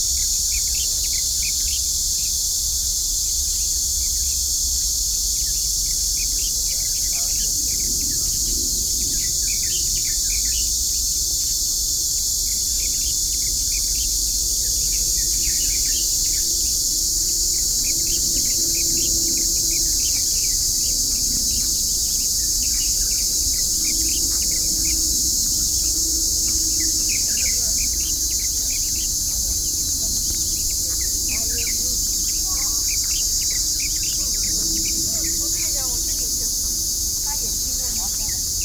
楓林路, Shimen Dist., New Taipei City - Seaside park
New Taipei City, Taiwan